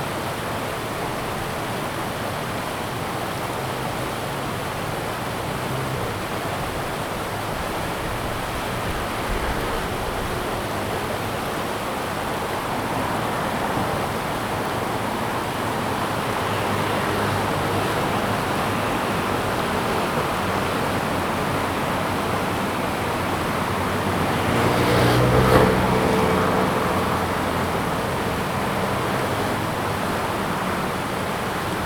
Wuquan Rd., Taichung City, Taiwan - Stream sound
Stream sound, Traffic Sound
Zoom H2n MS+XY
6 September 2016